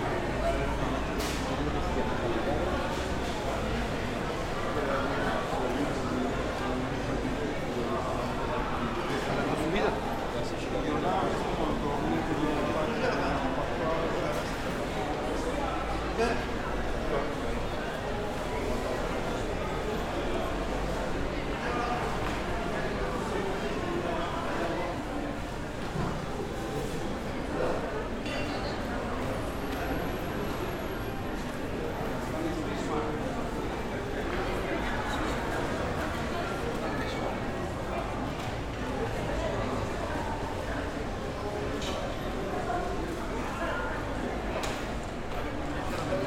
Market Sound
Captation : ZOOM H6
27 July 2022, 12:10